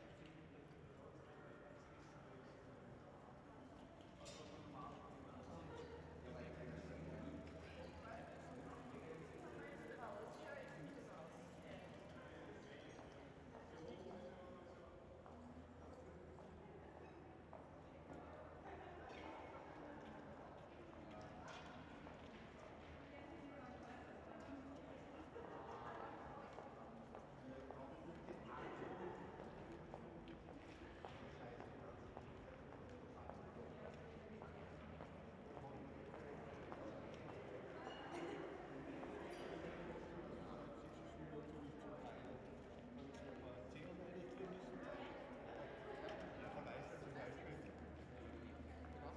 Stimmen, Schritte, Passage eines Velos. Jemand telefoniert.